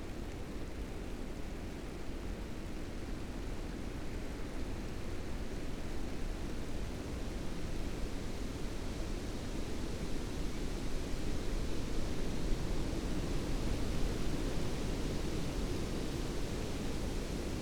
bad freienwalde/oder: baasee - the city, the country & me: squeaking tree
stormy afternoon, squeaking tree, some rain
the city, the country & me: january 2, 2015
Bad Freienwalde (Oder), Germany, 2 January